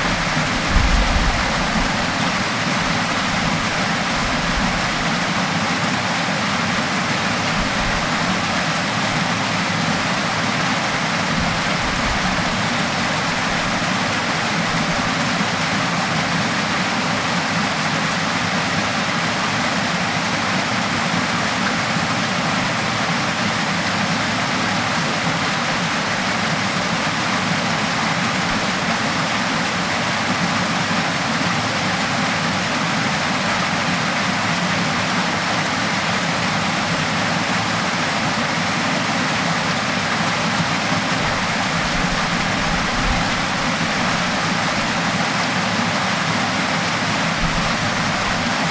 Dovestone Reservoir, Oldham, UK - Flowing water
Zoom H1 - Water flowing down a stone chute into the main reservoir